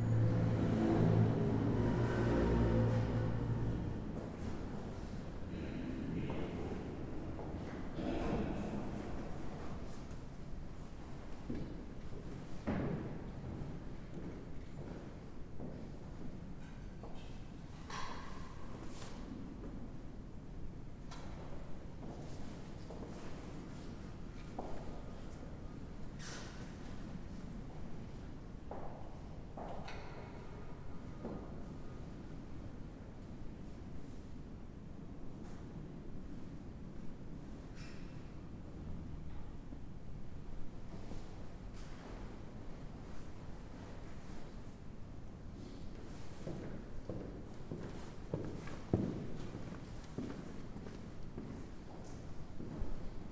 De Krijtberg Singel, Binnenstad, Amsterdam, Nizozemsko - De Krijtberg
De Krijtberg of Sint-Franciscus Xaveriuskerk is een rooms-katholieke rectoraatskerk in het centrum van Amsterdam, gewijd aan de heilige Franciscus Xaverius. De kerk staat aan het Singel en maakt deel uit van binnenstadsparochie van de Heilige Nicolaas. Hij staat in de volksmond ook wel bekend als De Rijtjeskerk.